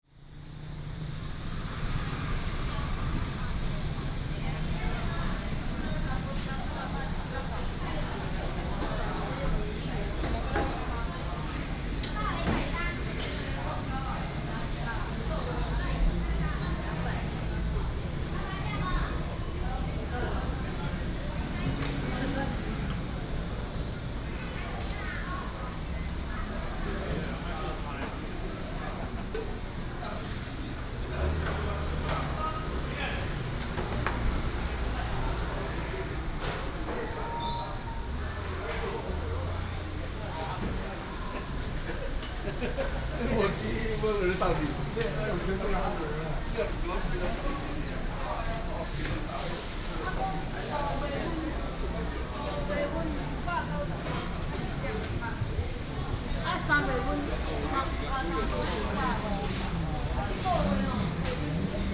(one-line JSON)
{"title": "東勇黃昏市場, Bade Dist., Taoyuan City - dusk market", "date": "2017-08-01 17:58:00", "description": "dusk market, Cicada sound, Traffic sound", "latitude": "24.96", "longitude": "121.31", "altitude": "118", "timezone": "Asia/Taipei"}